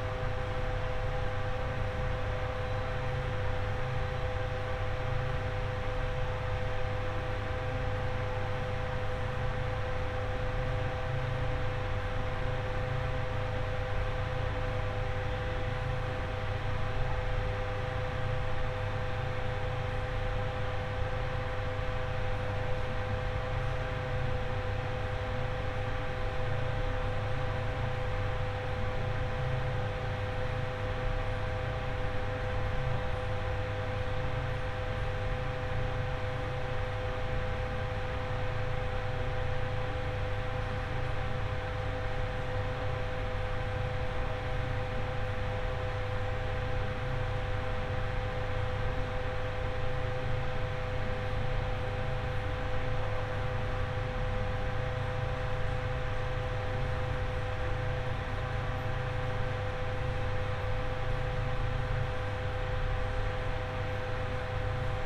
ventilation in the washroom of marina buidling, radio music
the city, the country & me: july 31, 2012